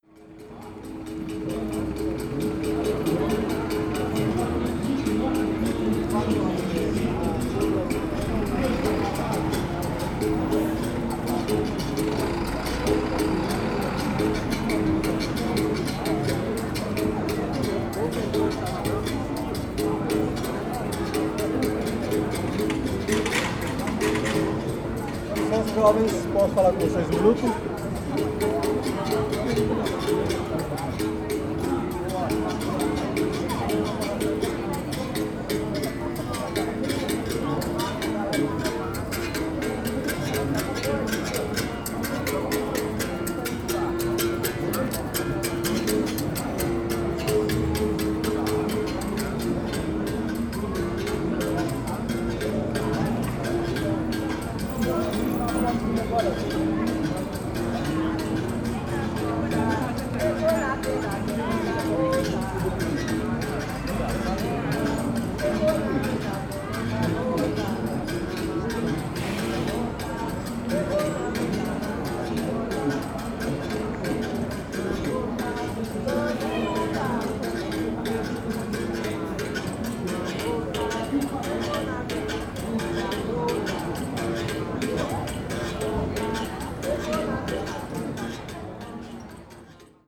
Calçadão de Londrina: Vendedor de berimbaus - Vendedor de berimbaus / Seller of berimbaus
Panorama sonoro: um vendedor de berimbaus tocava e cantava músicas tradicionais da capoeira em uma banquinha improvisada próximo à Praça Willie Davids. Nas proximidades, várias pessoas circulavam pelo Calçadão, veículos trafegavam pelas ruas e locutores de lojas anunciavam produtos e ofertas. Ainda, um homem abordava as pessoas na tentativa de vender docinhos.
Sound panorama: A berimbaus salesman played and sang traditional capoeira songs on an improvised stool next to Willie Davids Square. Nearby, several people circled the boardwalk, vehicles drove through the streets, and announcers advertised products and offers. Still, a man approached people in an attempt to sell sweets.